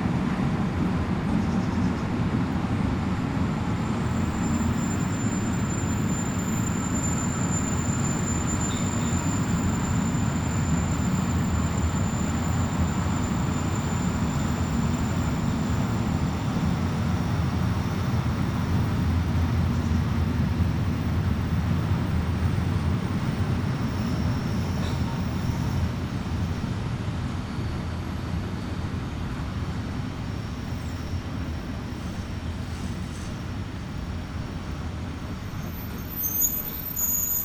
neoscenes: train at Martins house
Bremen, Germany